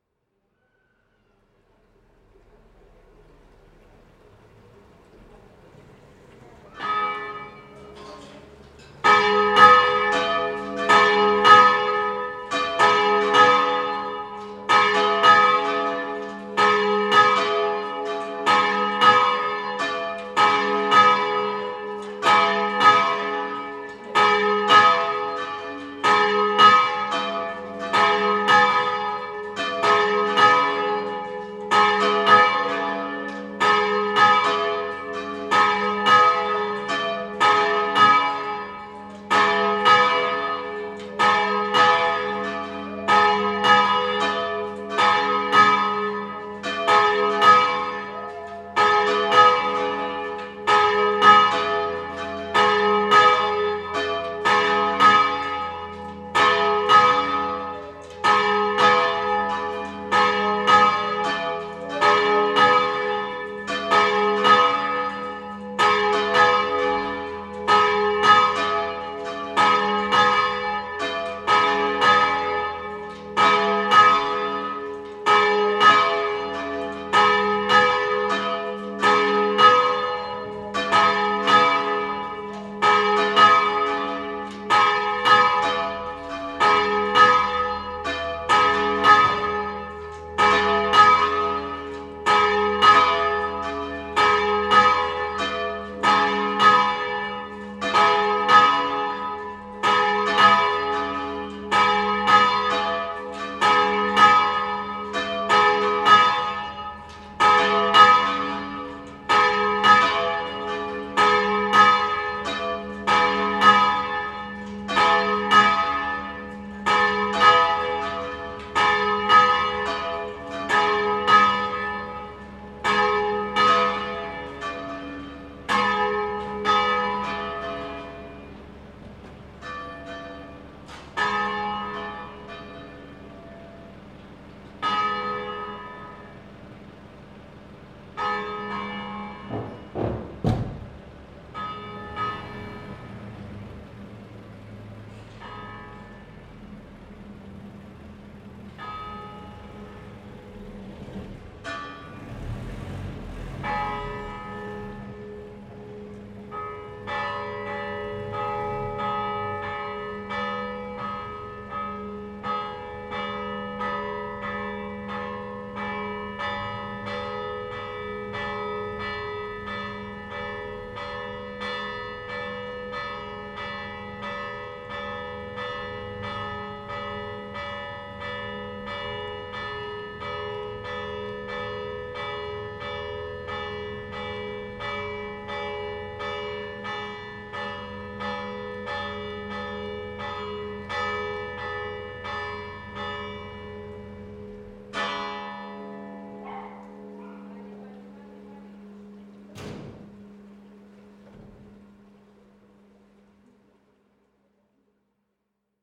Cogollos Vega - Andalousie
Église de l'Annonciation
Granada, Andalucía, España, August 2015